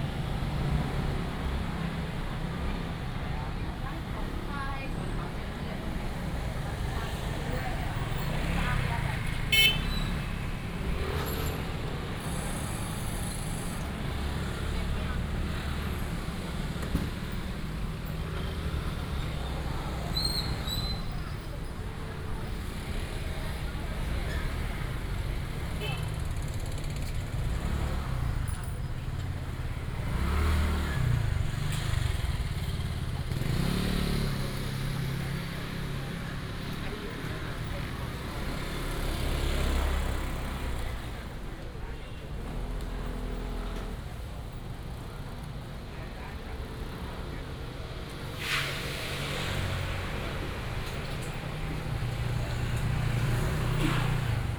Minquan Market, Changhua City - Walking in the market
Walking in the market, Traffic sound, A variety of vendors
19 January, 09:10